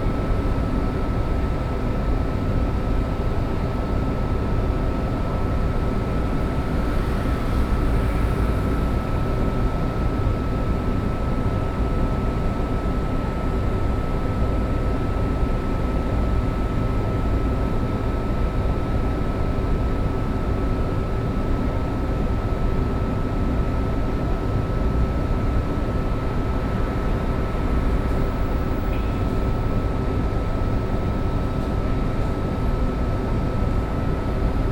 中山區康樂里, Taipei City - air conditioning noise
Building air conditioning noise